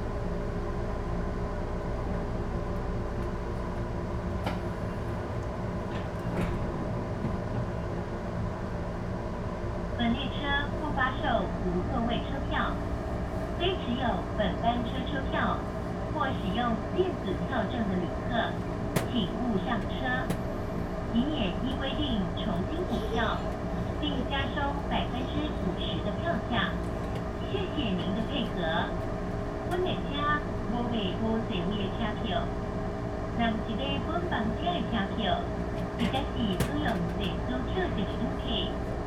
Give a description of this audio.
In train carriage, To Guanshan Station, Zoom H2n MS+ XY